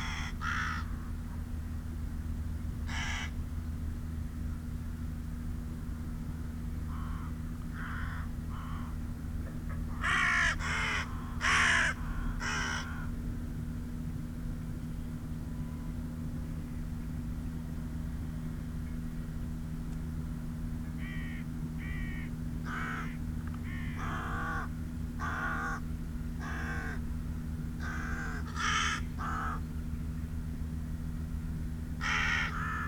Luttons, UK - Ploughing ... with corvids ...
Ploughing ... with bird calls from rook ... carrion crow ... corn bunting ... pheasant ... open lavalier mics clipped to hedgerow ... there had been a peregrine around earlier so the birds may have still been agitated ...